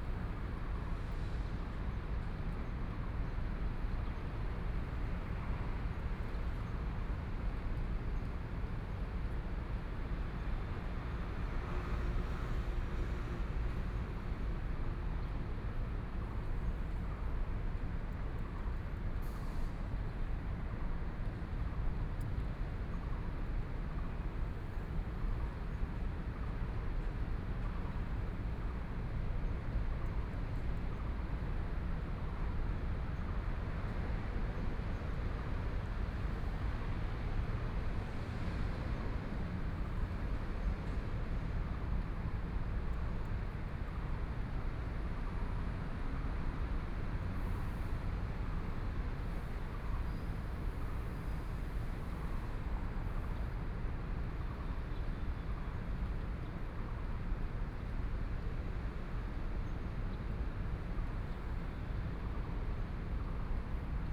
聚盛里, Zhongshan District - Parking lot
Parking lot, Environmental sounds